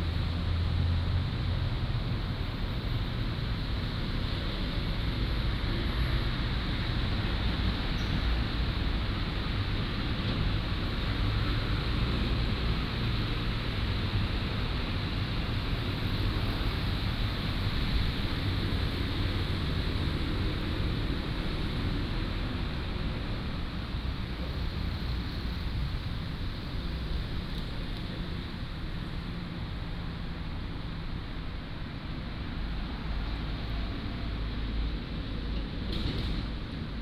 Sec., Fuxing S. Rd., Da'an Dist. - Small park
Traffic Sound, Small park